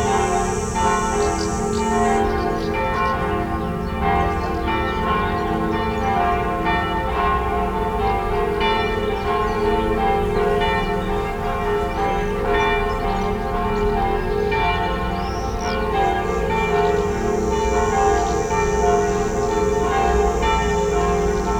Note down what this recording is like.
Montignac, Rue Du Calvaire, bees and bells